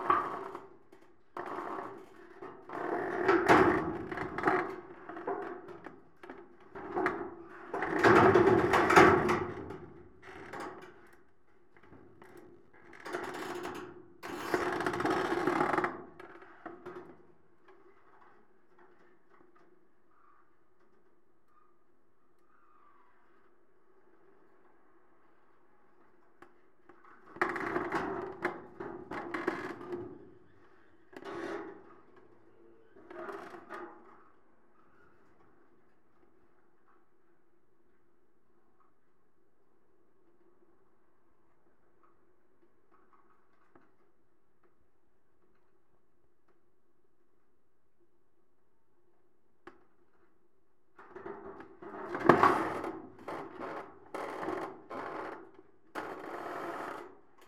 Yves Brunaud, Toulouse, France - metalic vibration 04
metal palisade moving by the action of the wind
ZOOM H4n